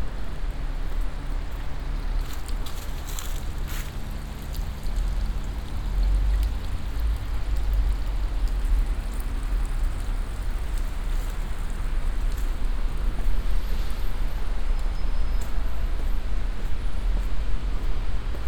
Cuenca, Cuenca, España - #SoundwalkingCuenca 2015-11-27 Crossing a wooden bridge over the Júcar river, Cuenca, Spain
Soundwalking - crossing a wooden bridge over the river Júcar, Cuenca, Spain.
Ludh binaural microphones -> Sony PCM-D100